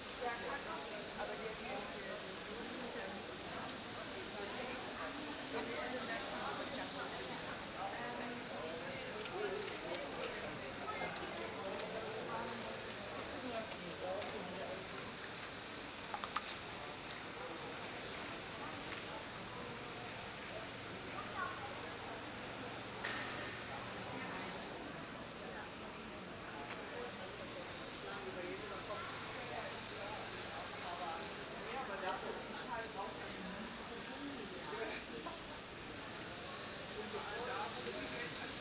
{"title": "Hühnermarkt, Frankfurt am Main, Deutschland - 14th of August 2018 Teil 2", "date": "2018-08-14 17:45:00", "description": "Walk from the fountain at Hühnermarkt, down the 'Königsweg', where German Kaiser used to walk after they became Kaiser - again several chats, spanish among others and a tourist guide - in the background a construction site, finishing the 'old town-project'.", "latitude": "50.11", "longitude": "8.68", "altitude": "100", "timezone": "GMT+1"}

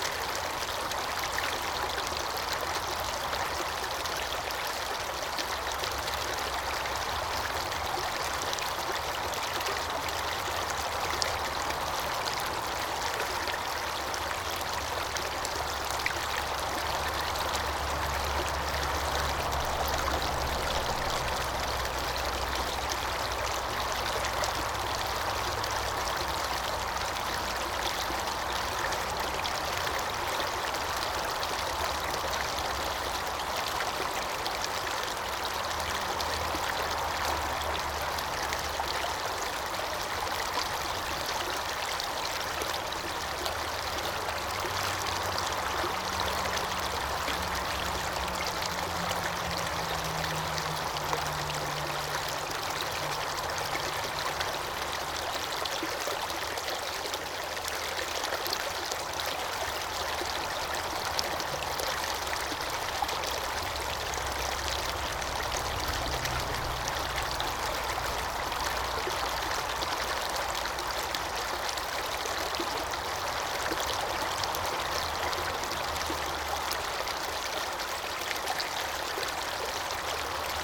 {"title": "Listening by the stream through the Glen of the Downs Nature Reserve, Co. Wicklow, Ireland - The stream through the Glen", "date": "2017-07-29 13:30:00", "description": "This is the sound of the stream running through the Glen of the Downs, combined with the stream of traffic that runs through the N11. Recorded with EDIROL R09.", "latitude": "53.14", "longitude": "-6.12", "altitude": "117", "timezone": "Europe/Dublin"}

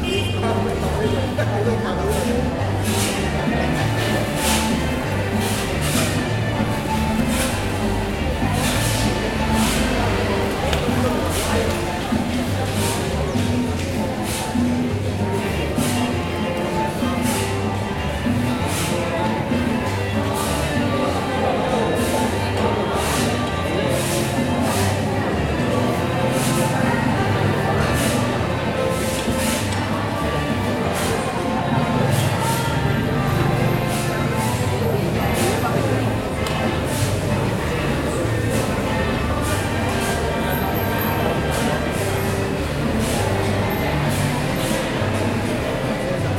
{"title": "Villavicencio, Meta, Colombia - Mercados Campesinos 7 Marzo 2015", "date": "2015-03-07 09:22:00", "description": "Ambientes Sonoros en los Mercados Campesinos que tienen lugar cada quince días en el polideportivo del barrio La Esperanza séptima etapa.", "latitude": "4.13", "longitude": "-73.63", "altitude": "441", "timezone": "America/Bogota"}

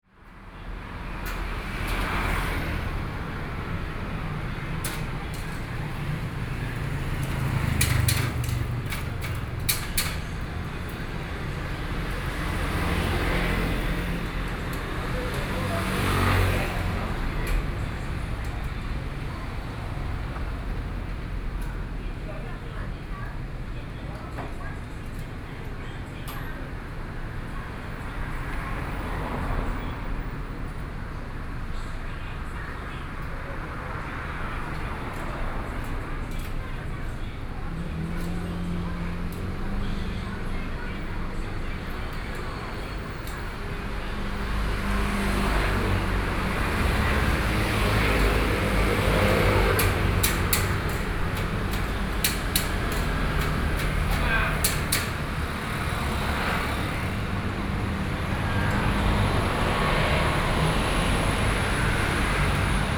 {"title": "Sec., Zhonghua Rd., Taitung City - Fried chicken shop", "date": "2014-09-05 20:48:00", "description": "Fried chicken shop on the roadside, Traffic Sound", "latitude": "22.75", "longitude": "121.14", "altitude": "15", "timezone": "Asia/Taipei"}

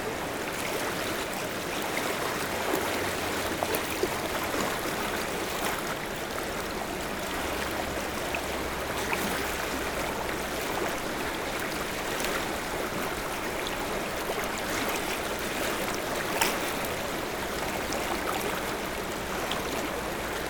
{"title": "Tours, France - Loire river", "date": "2017-08-14 10:20:00", "description": "Recording of the Loire river, flowing in the center of Tours. There's waves because of a small dam in the river. Elsewhere, the river is a lake without any noise.", "latitude": "47.40", "longitude": "0.69", "altitude": "47", "timezone": "Europe/Paris"}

